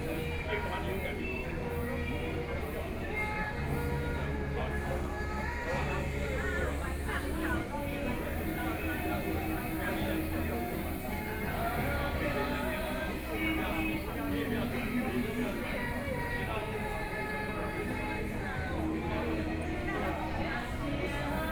Group of elderly people were dancing, Sony PCM D50 + Soundman OKM II
Taoyuan County, Taiwan, 11 September, ~10:00